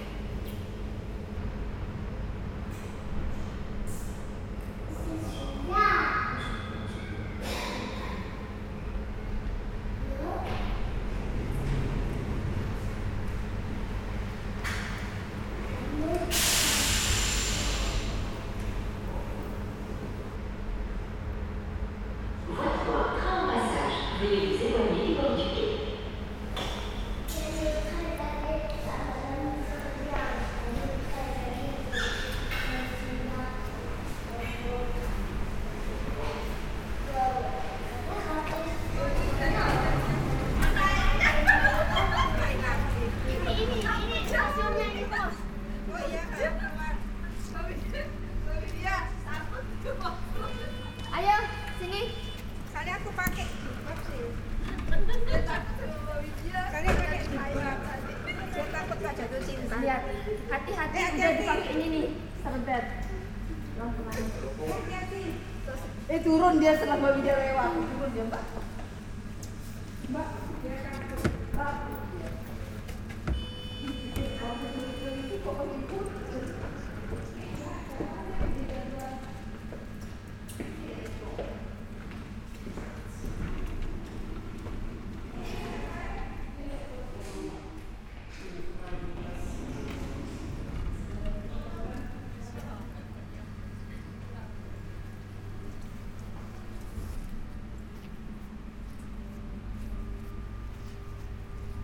Dinant, Belgium - Dinant station
Dinant is a small beautiful very touristic place. But, also, its a dead town, a dead zone, and the railway station is a fucking dead end station. Trains are rare, people look depressed, turnkey is rude, its raining since early on the morning. Are we in a rat hole ? In this recording, nothings happening. People wait, no train comes, noisy tourists arrive, a freight train passes. Everything look like boring, oh what a sad place...
29 September 2017